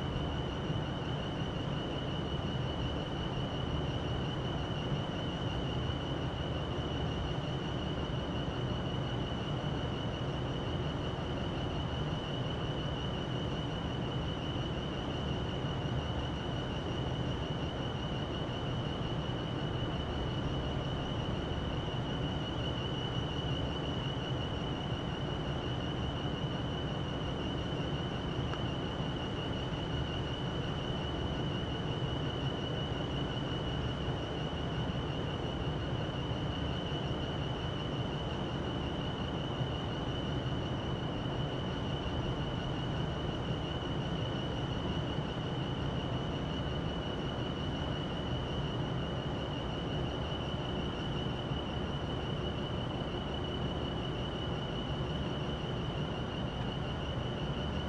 Wingham, ON, Canada - Air Vents and Electrics at Westcast Steel Plant
Humming vents captured outside on a cool, grey winter day. Some wind but not much in this nook. Recorded using Tascam DR-08 recorder.